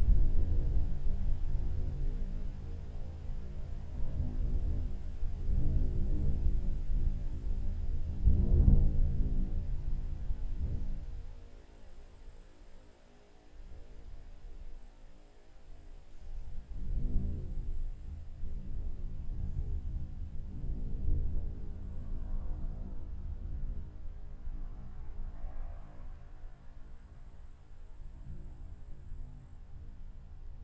Lithuania, Sudeikiai, in the tube
small microphones placed in the two metallic tubes